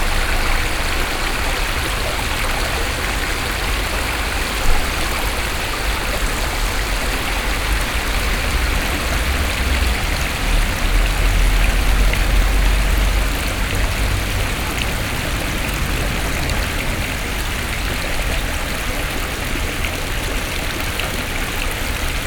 Givet, Quai de la Houille
Givet, France